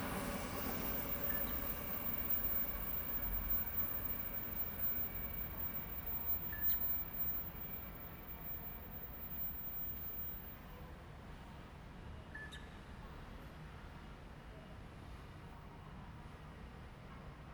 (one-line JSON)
{"title": "Zhongshan Rd., Miaoli City - train runs through", "date": "2017-03-22 16:42:00", "description": "The train runs through, Next to the tracks, Fireworks sound, Bird call\nZoom H2n MS+XY", "latitude": "24.56", "longitude": "120.82", "altitude": "53", "timezone": "Asia/Taipei"}